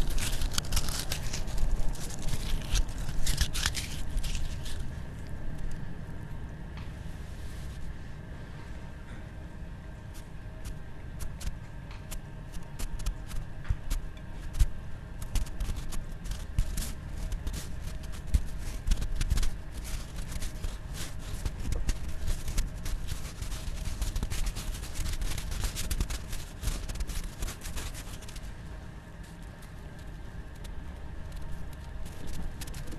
Snow, Icicles and 4 energies, Školská
his file was recorded during one of very cold December early evening in the courtyard of Skolska 28 Gallery. In my headphones I was listening one recording, a walk along the rural farm (former cow house) in Vysocina region. Within the frame of the project 4 energies (see the link below for more information), it was recorded several interpretations by various musicians and with different instruments. In this case I used the snow as an instrument. In the background you can hear ambient of Prague city and breaking big icicles.